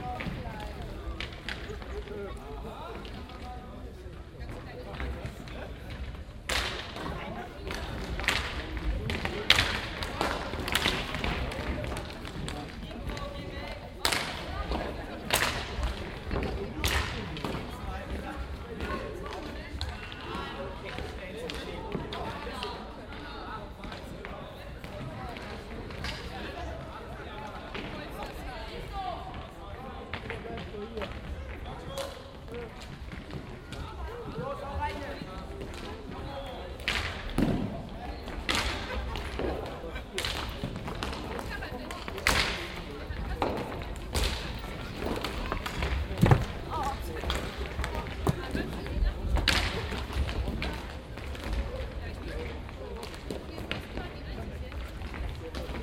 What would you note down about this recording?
sporthalle am frühen nachmittag, vorbereitung auf das spiel, inline skater hockey warmschiessen, soundmap nrw: social ambiences, topographic field recordings